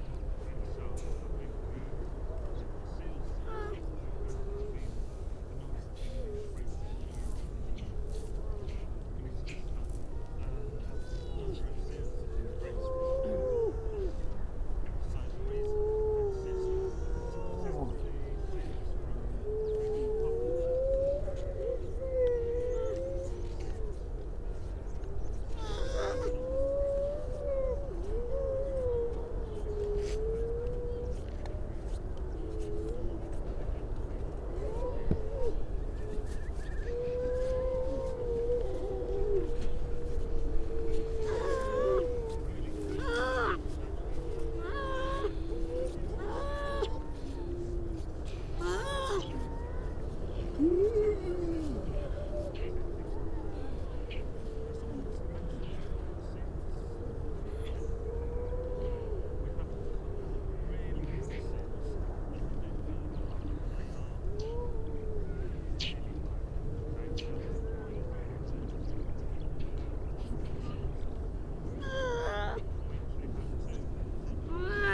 Unnamed Road, Louth, UK - grey seals soundscape ...
grey seal soundscape ... mainly females and pups ... parabolic ... bird calls from ... mipit ... skylark ... pied wagtail ... curlew ... crow ... all sorts of background noise ...
England, United Kingdom, 3 December 2019